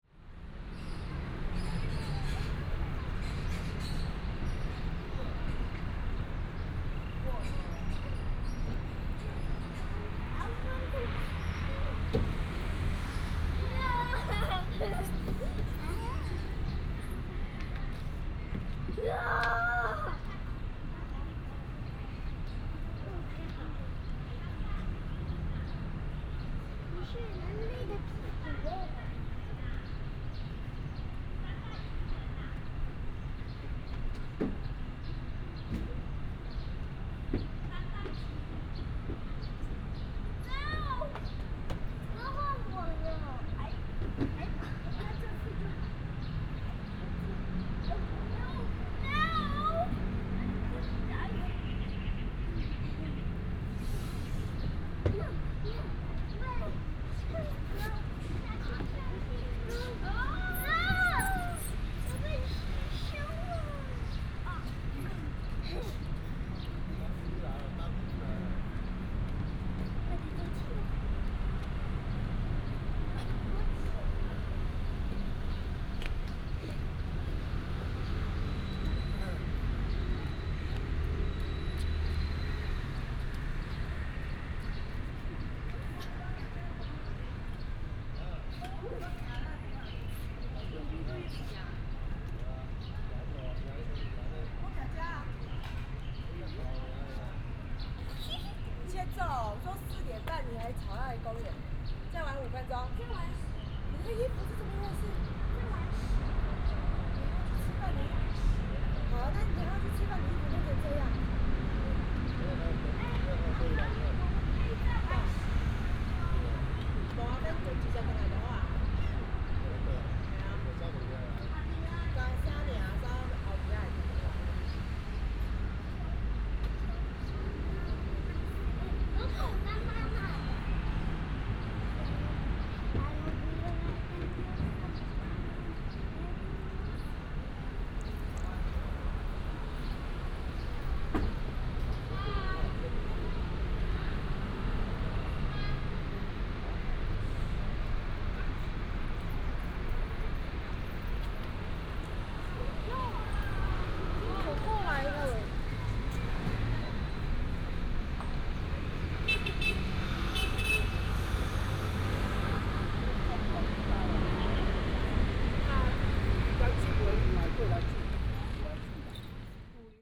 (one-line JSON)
{"title": "信義公園, Banqiao Dist., New Taipei City - walking in the Park", "date": "2017-04-30 16:39:00", "description": "walking in the Park, Traffic sound, Child, sound of the birds", "latitude": "25.00", "longitude": "121.46", "altitude": "22", "timezone": "Asia/Taipei"}